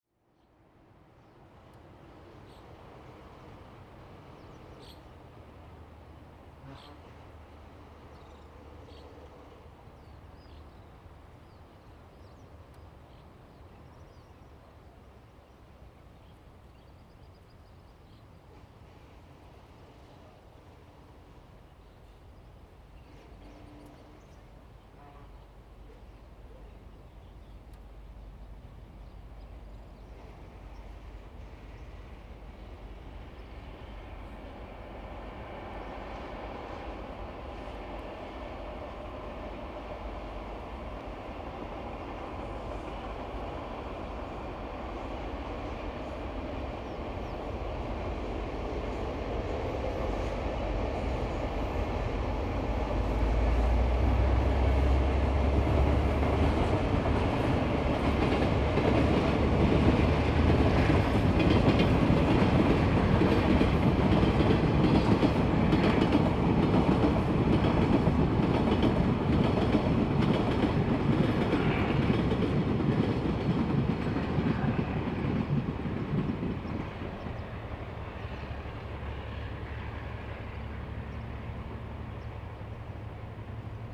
金崙村, Taimali Township - Birdsong
Birdsong, In embankment, The distant sound of embankment construction, Train traveling through
Zoom H2n MS +XY